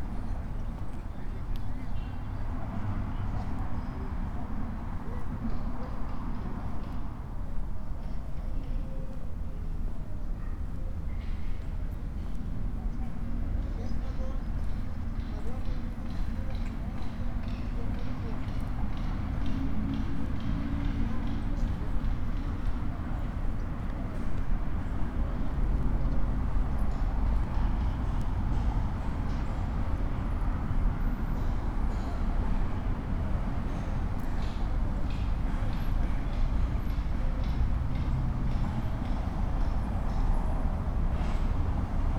Panorama Park, Av. Panorama, Valle del Campestre, León, Gto., Mexico - Por el parque de panorama.
Around the panorama park.
I made this recording on November 30th, 2020, at 2:43 p.m.
I used a Tascam DR-05X with its built-in microphones and a Tascam WS-11 windshield.
Original Recording:
Type: Stereo
Esta grabación la hice el 30 de noviembre de 2020 a las 14:43 horas.